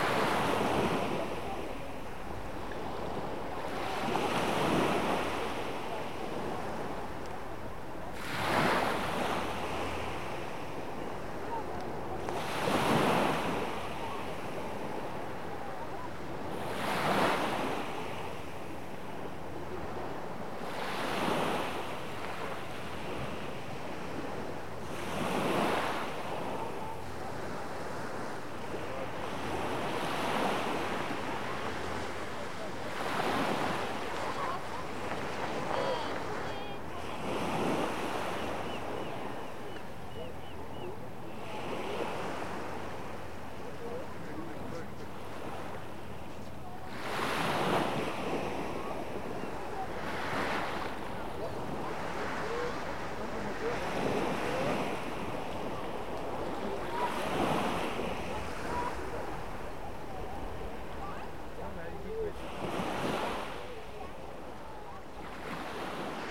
Trégastel, Bretagne.France. - Evening waves on the beach [grève blanche]
Tregastel, Grève blanche.Il fait déjà nuit.vagues sur la plages.Quelques voix.
Tregastel Grève blanche Beach.Night.Somes voices.
5 August 2011